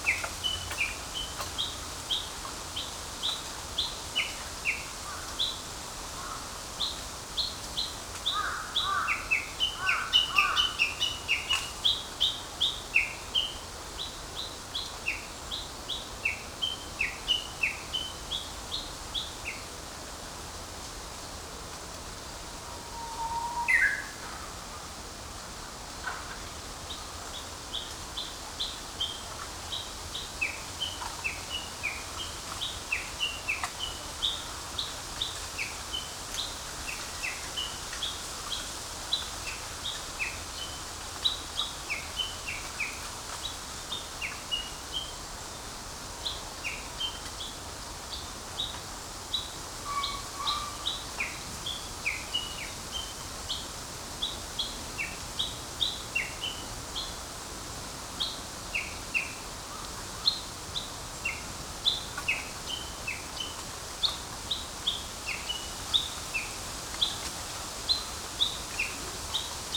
Minamizakura, Yasu City, Shiga Prefecture, Japan - Japanese bush warbler, pheasant, and crows
Recorded on a Sunday afternoon in a small bamboo grove along Yasugawa (river) with a Sony PCM-M10 recorder. Processed with Audacity on Fedora Linux: trimmed length to 10 minutes, applied high-pass filter (6dB/octave at 1000Hz), and normalized.